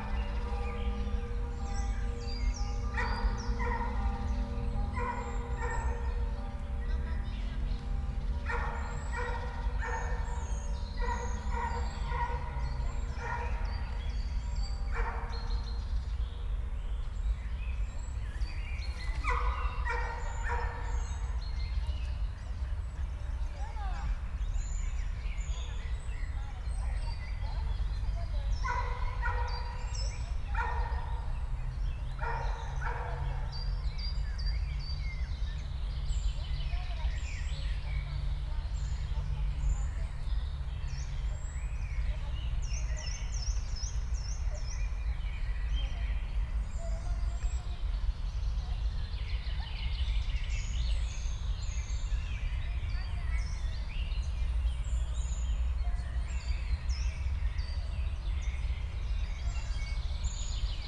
Park Górnik, Oświęcimska, Siemianowice Śląskie - church bells, park ambience
churchbells heard in Park Górnik, park ambience, distant rush hur traffic drone
(Sony PCM D50, DPA4060)